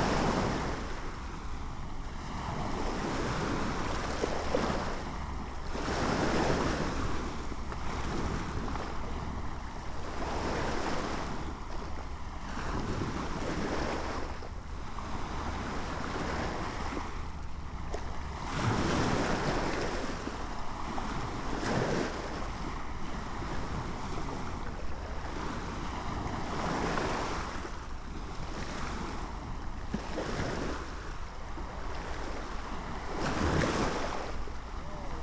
Parque Natural de Serra Gelada, Av. Oscar Esplá, l'Alfàs del Pi, Alicante, España - Playa de L'Olla. Playa de piedras.
Playa de L'Olla